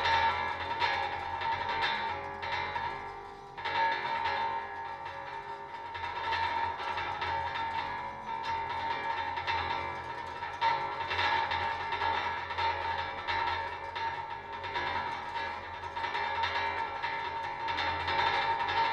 Chorillo Miraflores Valley, wind 35km/h, (ZOOM F1, stereo contact mice on fence)
The Miraflores Valley was one of the most important lithic sources of raw materials (tuff and silicified tuff) for the production of stone tools at the Isla Grande de Tierra del Fuego region. Recent archaeological research showed that the materials were transported up to 320 km away and also off shore. These rocks were recorded in archeological contexts of several small islands in the Strait ofMagellan and on the coasts of continental Patagonia, suggesting some level of interaction between terrestrial and maritime
hunter-gatherers dating back 4000-3000 years.